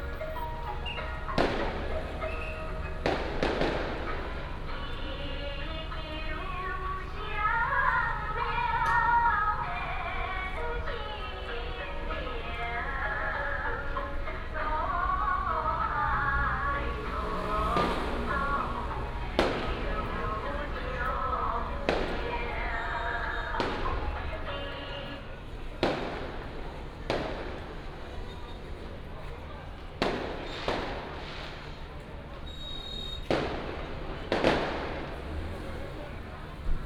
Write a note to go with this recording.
Firecrackers and fireworks, Traffic sound